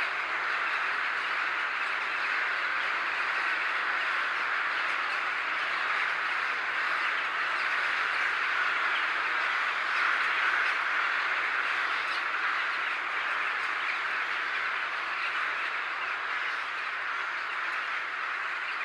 Binaural recording of huge amounts of birds (purple martins?) gathering on trees just before the dusk.
Recorded with Soundman OKM on Sony PCM D-100